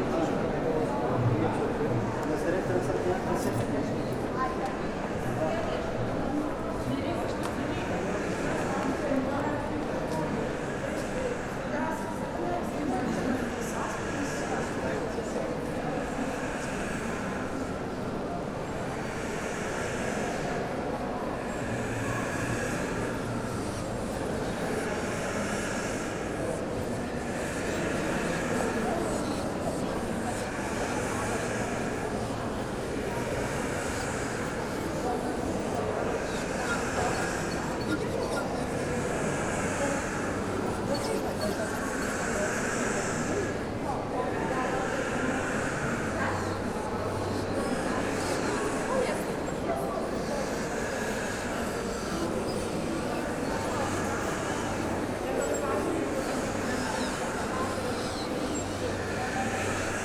Vilnius Art11 fair - walk through
short walkthrough international Vilnius Art11 fair
July 2011